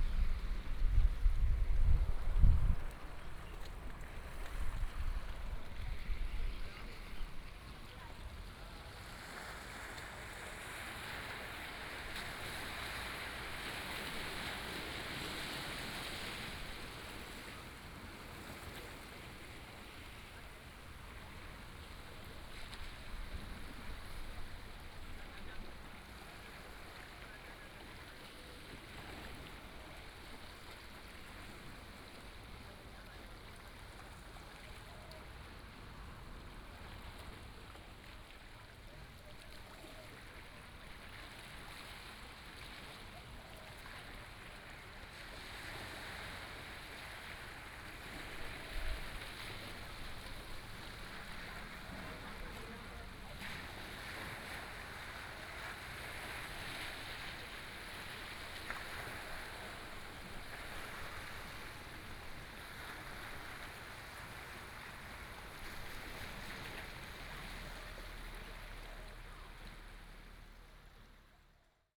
at the seaside, Bird sound, Sound of the waves, tide